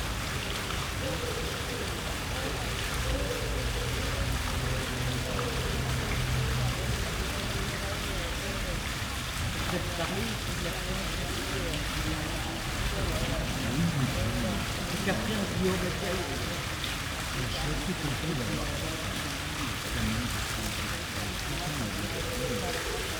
Hotest day in Paris so far in 2022 fountain, people chatting on benches in this green square, Rue Clotaire, Paris, France - Morning atmosphere on the hotest day so far in 2022
Morning atmosphere on what was forecast to be the hotest day in Paris so far in 2022. Extreme temperatures reaching 40C much earlier than usual. A small green square with fountain and people resting or chatting on bendhes. Pigeons call and cars pass.
France métropolitaine, France, 18 June, ~10:00